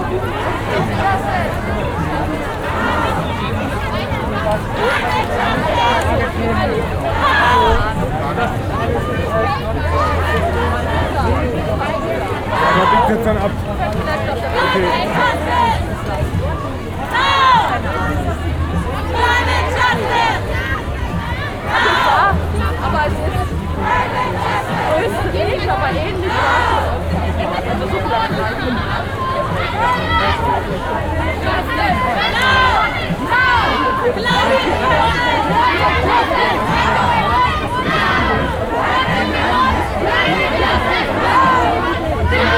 Dorotheenstraße, Berlin, Deutschland - climate justice
24th of september climate march